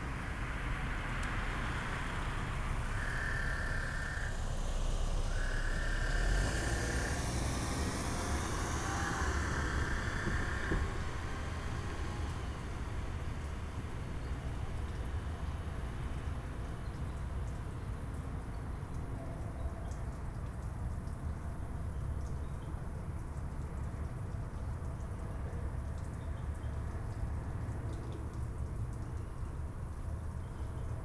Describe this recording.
Two toads chatting about a block away from each other. Train, storm drain, insects, roaches, cars, urban night sounds. Church Audio CA-14 omnis with binaural headset > Tascam DR100 MK-2